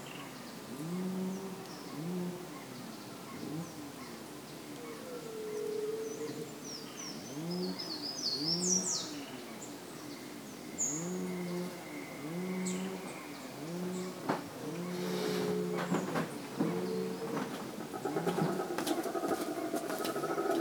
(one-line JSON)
{"title": "Estrada do Chapadão, Canela - RS, 95680-000, Brasil - Monkeys and birds in the Chapadão, Canela", "date": "2019-07-18 08:15:00", "description": "Recorded on the Chapadão road, rural area of Canela, Rio Grande do Sul, Brazil, with Sony PCM-M10 recorder. The predominant sound is of monkeys known as bugios. Also heard are birds, dogs and chainsaws. #WLD2019", "latitude": "-29.42", "longitude": "-50.80", "altitude": "471", "timezone": "America/Sao_Paulo"}